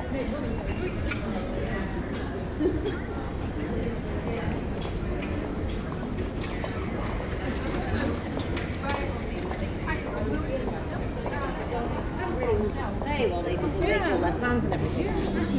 Den Haag, Nederlands - Den Haag center
A long walk into the center of Den Haag, during a busy and enjoyable Saturday afternoon. In first, the very quiet Oude Molsstraat, after, Grote Halstraat with tramways, the reverb in the « Passage » tunnel, the very commercial Grote Marktstraat. Into this street, I go down in the underground tramways station called Den Haag, Spui, near to be a metro station. A very intensive succession of tramway passages. Escalator doing some big noises, and going back outside. Into the Wagenstraat, some street musicians acting a automatic harmonium. Den Haag is a dynamic and very pleasant city.